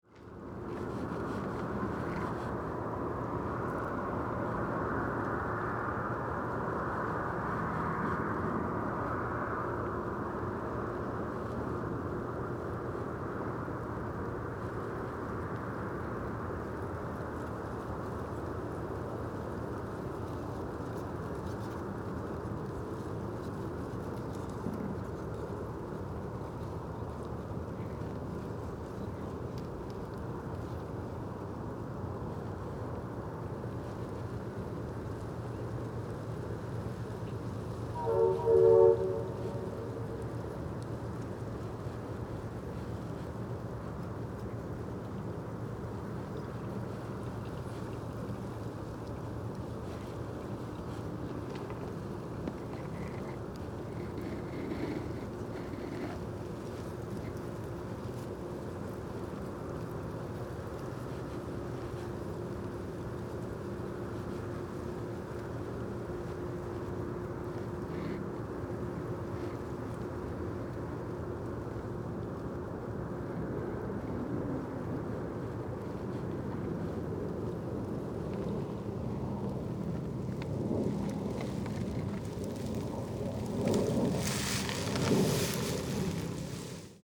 marshland Staten Island
quiet ambience and distant tugboat horn
9 January 2012, 14:34